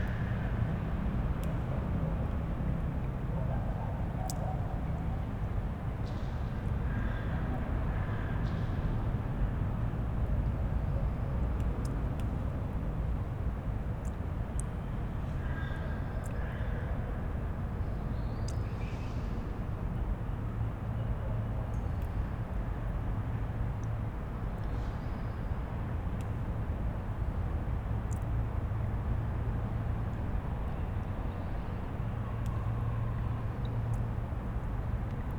Punto Franco Nord, Trieste, Italy - ambience, a few drops, mosquitos
atmosphere with dripping water and mosquitos
(SD702, AT BP4025)